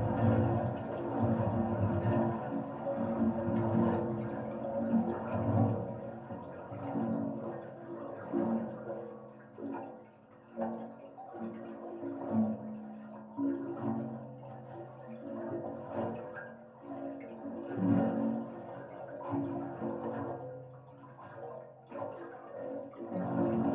Rostrevor, N. Ireland - Tide Coming In Over Metal Stairs
Recorded with a pair of JrF contact mics and a Marantz PMD661
Newry and Mourne, UK, 18 February 2016